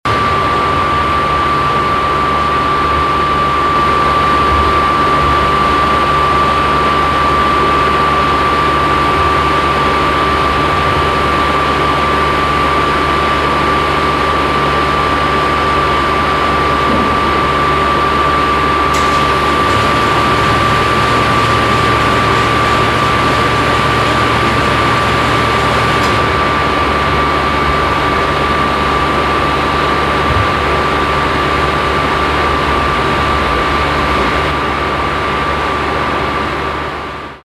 haan, marktpassage, tiefgarage - haan, marktpassage, tiefgarage, lüftung
lüftungsgebläse in der tiefgarage
soundmap nrw:
social ambiences, topographic fieldrecordings